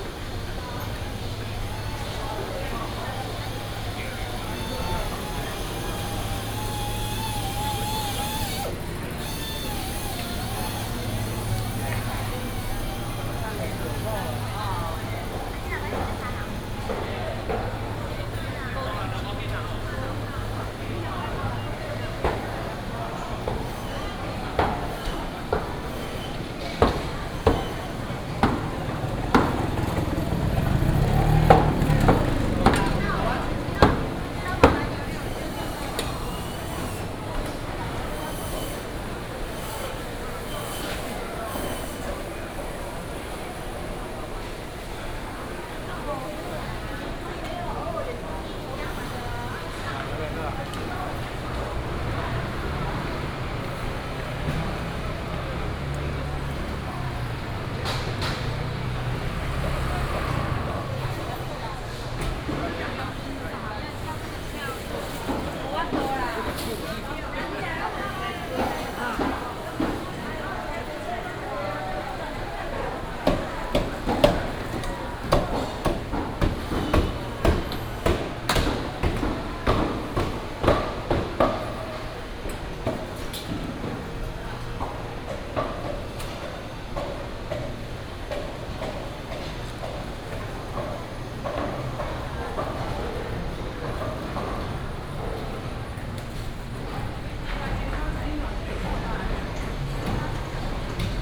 新建國市場, Taichung City - Walking in the new market
Walking through the new market